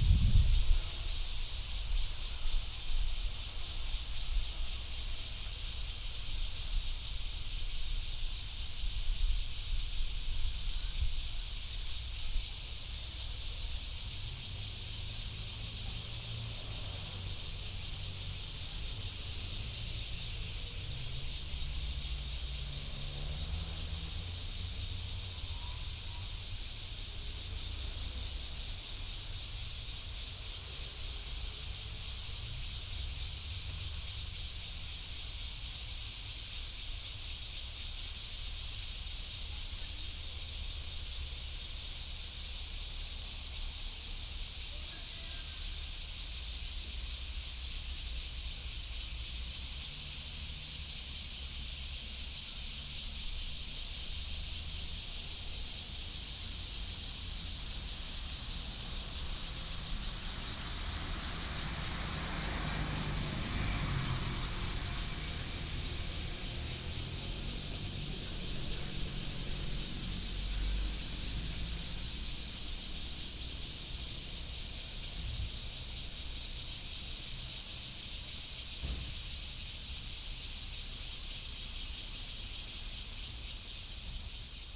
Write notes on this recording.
Traffic Sound, Cicadas sound, Hot weather, small Town, Zoom H2n MS +XY